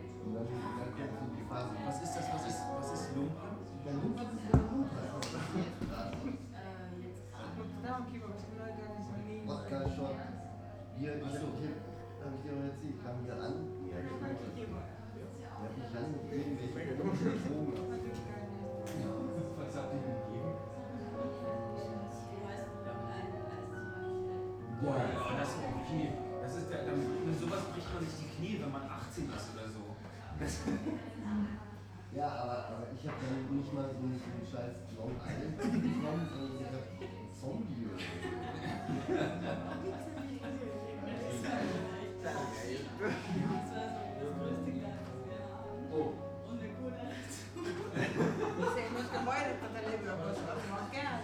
Berlin, Hobrecht- / Bürknerstr. - the city, the country & me: bar people
the city, the country & me: november 3, 2011